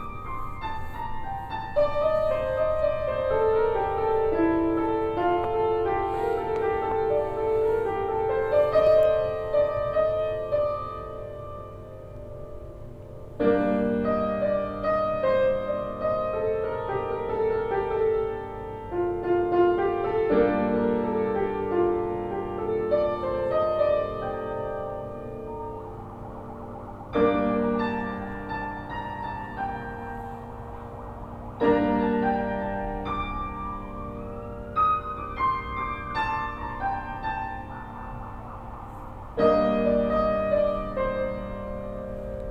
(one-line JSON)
{"title": "Poznan, Grand Theater - piano in an attic practice room", "date": "2015-12-25 00:31:00", "description": "(binaural) a friend playing piano in a ballet practice room located in one of the attics of the Grand Theater. (sony d50 + luhd pm01 binaurals)", "latitude": "52.41", "longitude": "16.92", "altitude": "79", "timezone": "Europe/Warsaw"}